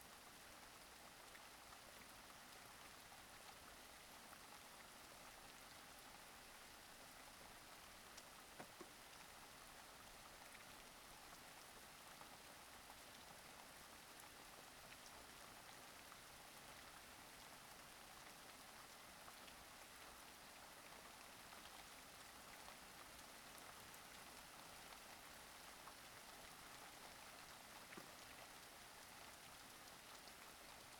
Mountshannon, Co. Limerick, Ireland - Thunderstorm
intense thunderstorm with rain, wind, lightening and thunder.